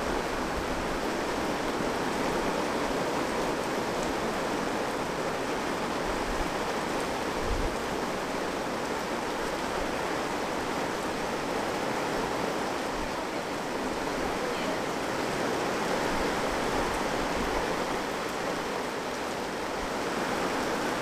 {"title": "Powiat Kielecki, Polen - Rain", "date": "2013-08-07 16:10:00", "description": "The onset of heavy rain in a fine restaurant's park space. Human voices & the rain's percussion in duett.", "latitude": "50.87", "longitude": "20.63", "altitude": "266", "timezone": "Europe/Warsaw"}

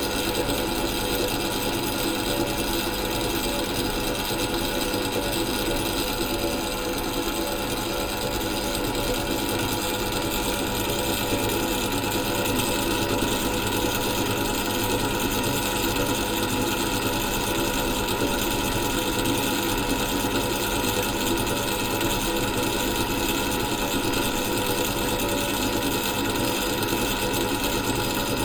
{"title": "Lörick, Düsseldorf, Deutschland - Düsseldorf, Wevelinghoferstr, kybernetic art objects", "date": "2015-04-25 21:29:00", "description": "The sound of kybernetic op art objects of the private collection of Lutz Dresen. Here no.04 another small box here with a rotating fine line geometric form illumintaed with black light.\nsoundmap nrw - topographic field recordings, social ambiences and art places", "latitude": "51.25", "longitude": "6.73", "altitude": "36", "timezone": "Europe/Berlin"}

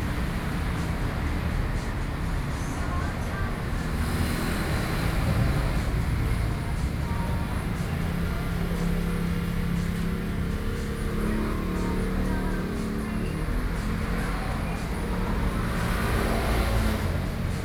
{"title": "Daye Rd., Beitou Dist., Taipei City - Garage warning sound", "date": "2013-07-08 11:28:00", "description": "Garage warning sound, Traffic Noise, Sony PCM D50 + Soundman OKM II", "latitude": "25.14", "longitude": "121.50", "altitude": "11", "timezone": "Asia/Taipei"}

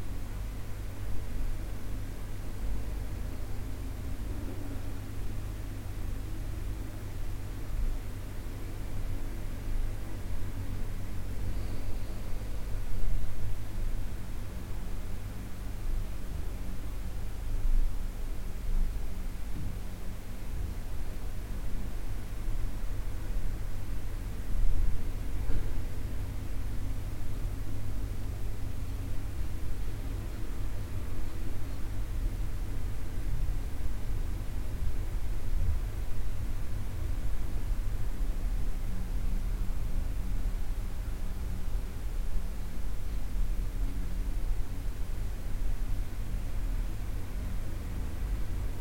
{"title": "amsterdam, prinsengracht, inside hotel room", "date": "2010-07-11 13:15:00", "description": "inside a hotel room, windws open the fan running\ncity scapes international - social ambiences and topographic field recordings", "latitude": "52.37", "longitude": "4.88", "altitude": "-1", "timezone": "Europe/Amsterdam"}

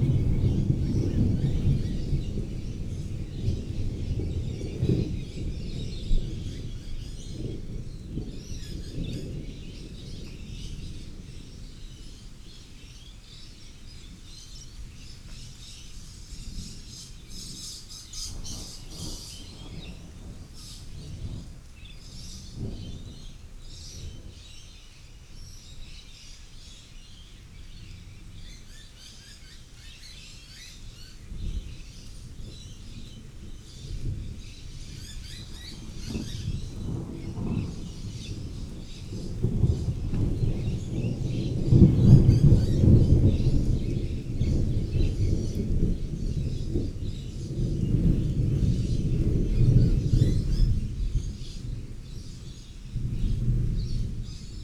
{"title": "Coomba Park NSW, Australia - Storm Brewing Birds", "date": "2015-12-16 14:16:00", "description": "A storm brewing with bird sounds in Coomba Park, NSW, Australia.", "latitude": "-32.24", "longitude": "152.47", "altitude": "16", "timezone": "Australia/Sydney"}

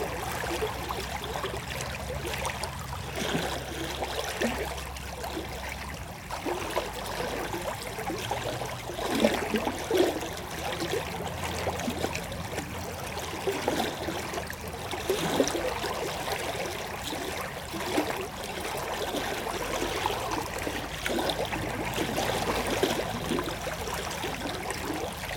{"title": "Court-St.-Étienne, Belgique - River L'Orne", "date": "2016-02-11 16:30:00", "description": "A river called l'Orne, in a pastoral landcape.", "latitude": "50.64", "longitude": "4.60", "altitude": "75", "timezone": "Europe/Brussels"}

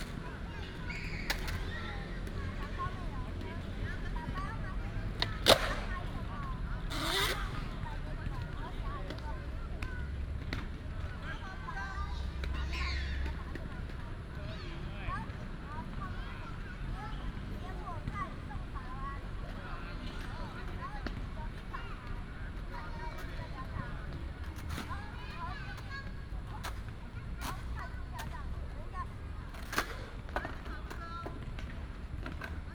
{"title": "Rende Park., Bade Dist. - skateboard", "date": "2017-07-20 19:35:00", "description": "skateboard, Skating rink", "latitude": "24.94", "longitude": "121.29", "altitude": "141", "timezone": "Asia/Taipei"}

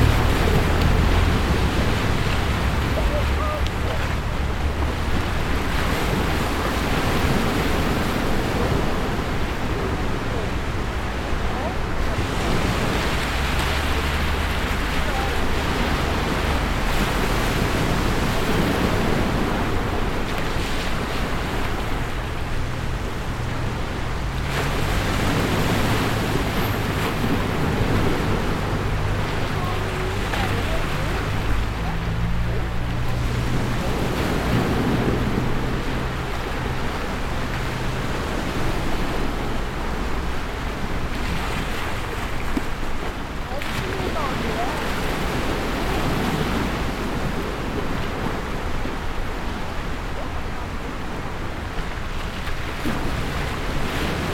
{"title": "Bd Stephanopoli de Comene, Ajaccio, France - Ajaccio, France Beach 01", "date": "2022-07-27 20:00:00", "description": "wave sound road noise\nCaptation : ZOOM H6", "latitude": "41.91", "longitude": "8.72", "timezone": "Europe/Paris"}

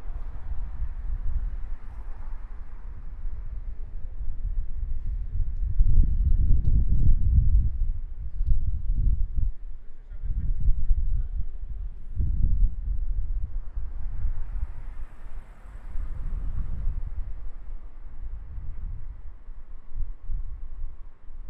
{"title": "Tt. Vasumweg, Amsterdam, Nederland - Wasted Sound Damen Warehouse", "date": "2019-10-16 16:29:00", "description": "The wasted sound coming from a big shipyard warehouse.", "latitude": "52.41", "longitude": "4.88", "altitude": "2", "timezone": "Europe/Amsterdam"}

